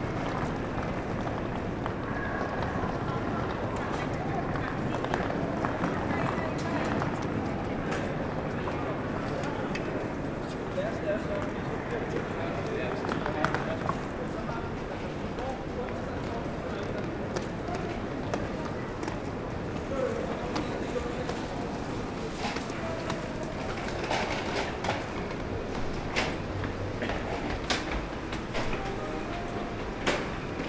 København, Denmark - Copenhagen station
Walking into the main Copenhagen station. Some trains are leaving. The station is globally quiet as a large part of commuters use bike into the city.
15 April 2019, 09:00